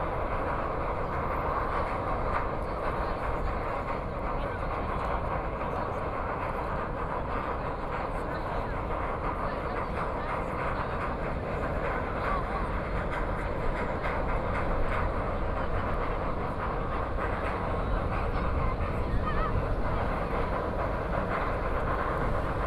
THE GRAND GREEN, Taipei - Laughter
Electronic music performances with the crowd, Traffic Noise, S ony PCM D50 + Soundman OKM II
Taipei City, Zhongzheng District, 華山公園(市民), September 2013